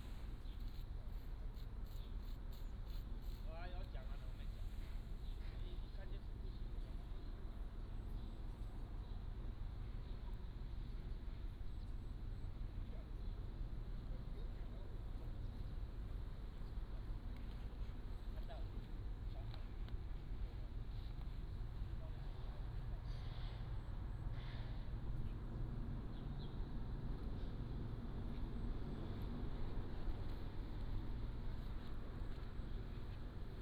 Nangan Township, Taiwan - In the square
In the square